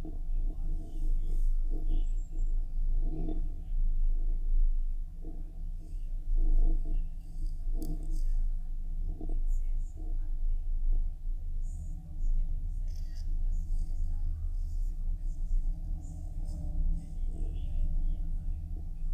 one of thick metallic cables holding TV tower. contact microphone recording. to my surprise I've discovered not only hum and creak of the cable, but also some radio...